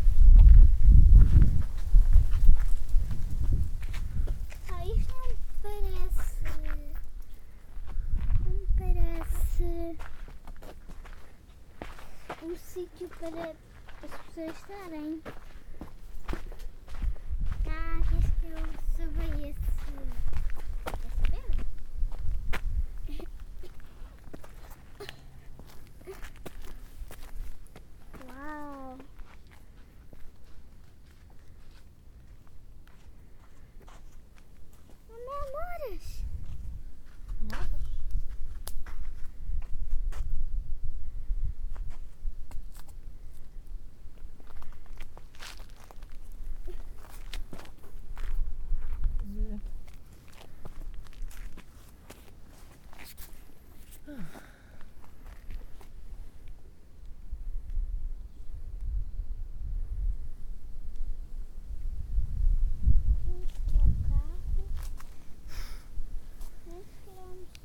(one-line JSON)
{"title": "Capuchos Sintra, Lisbon, climb", "date": "2010-08-28 14:11:00", "description": "Capuchos Convent, Sintra, Climbing rocks, leaves, children and adults talking", "latitude": "38.78", "longitude": "-9.44", "timezone": "Europe/Lisbon"}